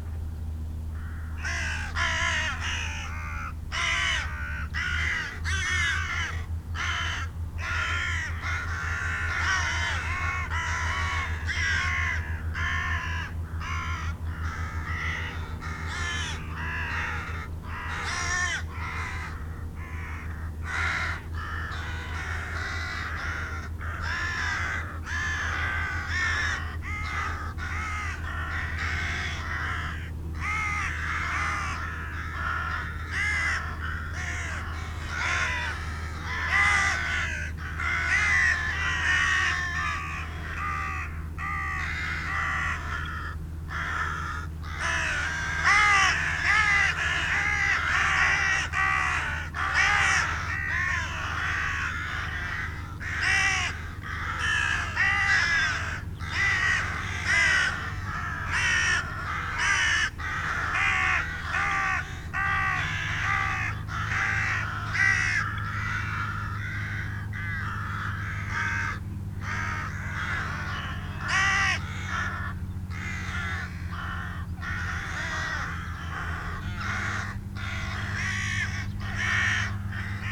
Ploughing ... with bird calls from rook ... carrion crow ... corn bunting ... pheasant ... open lavalier mics clipped to hedgerow ... there had been a peregrine around earlier so the birds may have still been agitated ...
Malton, UK, 29 November 2016, 08:30